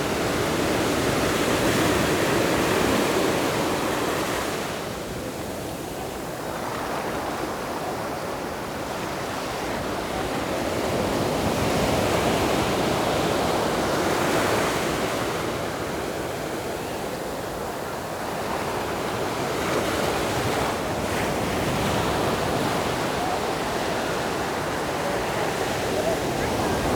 Yilan County, Taiwan - the waves
Sound of the waves, In the beach, Hot weather
Zoom H6 MS+ Rode NT4
Zhuangwei Township, Yilan County, Taiwan, 26 July 2014